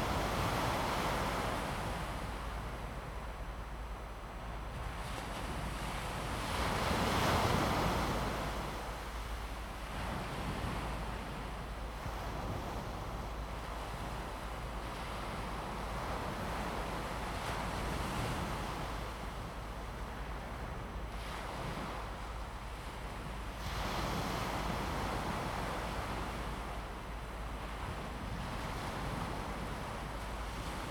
Jialu, Fangshan Township, Pingtung County - Late night at the seaside
Sound of the waves, Late night at the seaside
Zoom H2n MS+XY